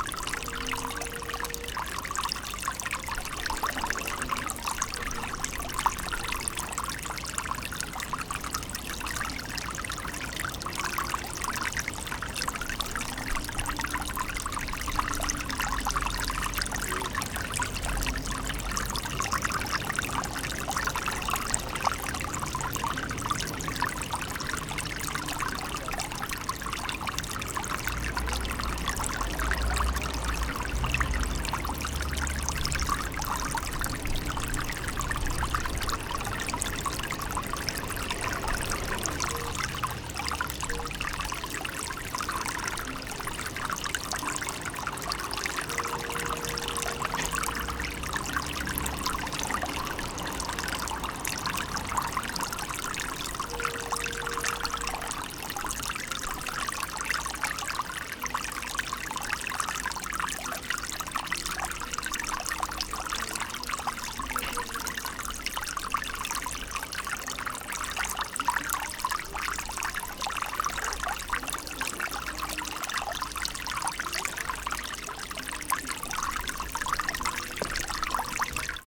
Skwer H. C. Hoovera, Krakowskie Przedmieście, Warszawa, Pologne - Fontanna Skwer Herbeta C. Hoovera
Fontanna Skwer Herbeta C. Hoovera
Warsaw, Poland, 14 August, ~15:00